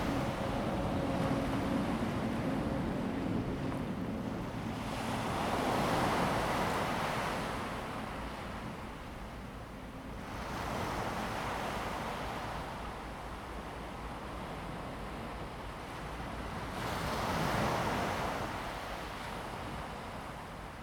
枋山鄉中山路三段, Fangshan Township - Late night seaside
Late night seaside, traffic sound, Sound of the waves
Zoom H2n MS+XY
Fangshan Township, Pingtung County, Taiwan, 28 March 2018, ~04:00